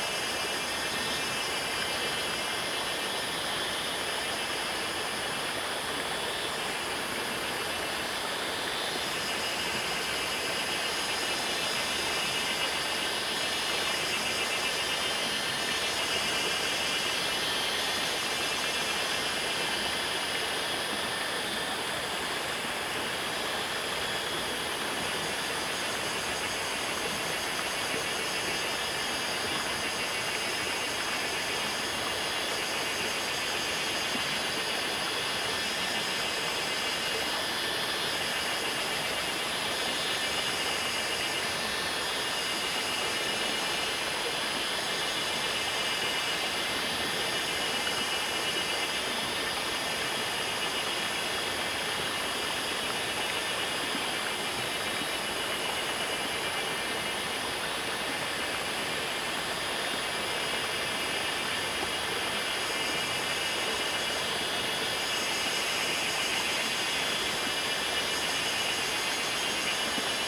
種瓜坑, 埔里鎮成功里 - Cicada and stream sounds
Cicada and stream sounds
Zoom H2n MS+XY